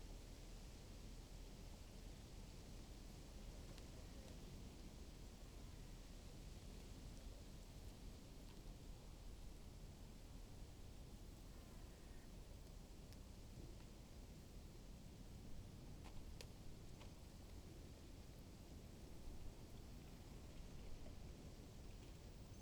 Berlin Wall of Sound, BMX point Pechsteinstrasse 080909
Berlin, Germany